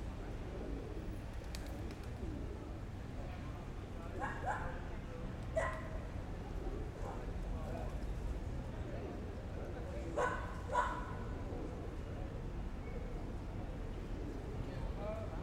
Bishop Lucey Park, Grand Parade, Cork City - Bishop Lucey Park
Peace Park on a wet and humid August afternoon. Pigeons and people drinking cans abound.
Recorded onto a Zoom H5 with an Audio Technica AT2022 on a park bench.